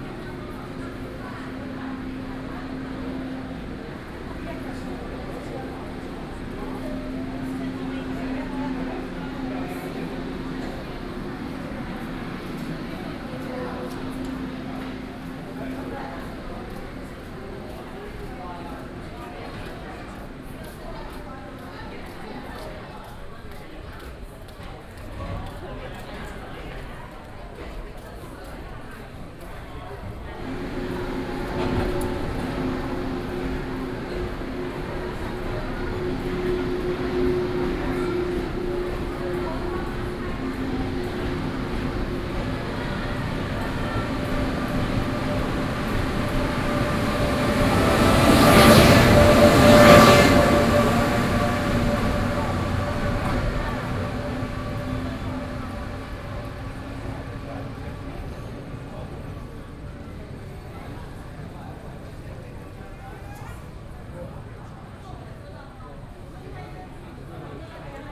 In the station platform
Sony PCM D50+ Soundman OKM II
New Taipei City, Ruifang District, 民權街鐵路巷8號